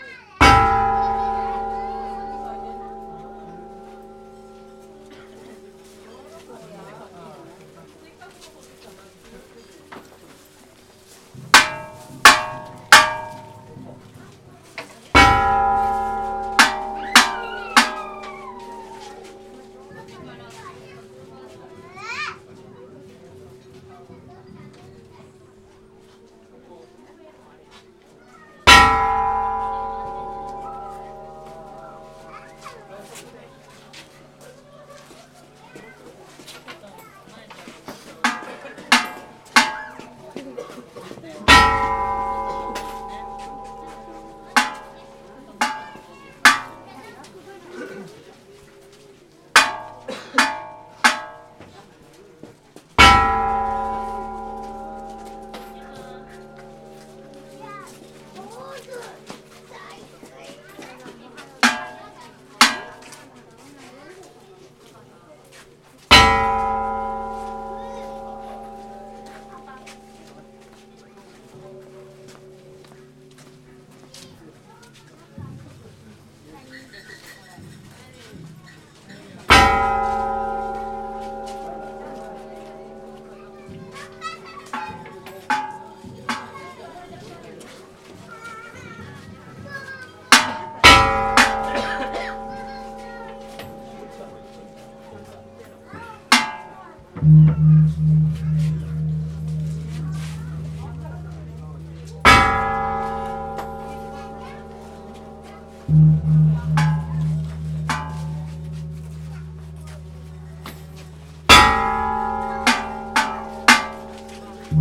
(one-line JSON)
{"title": "Tachiki Kannon Anyo-Ji - New Years Gongs at Tachiki Kannon", "date": "2019-01-27 16:00:00", "description": "Tachiki Kannon is a temple/shrine in the city of Otsu. The temple is located at the top of a hill reached by climbing hundreds of steep stone steps.\nEvery Sunday during the first month of the year the temple is open and active for bestowing new year's luck. By custom every person in the neighborhood is supposed to visit to the temple during this time.\nIn the main area worshippers line up to offer money and obeisances to an incense clouded shrine in which Shinto priests are wailing on an array of gongs, drums and chimes. It is hard to be in this area for more than a few minutes as the sound is nearly deafening.", "latitude": "34.92", "longitude": "135.91", "altitude": "239", "timezone": "GMT+1"}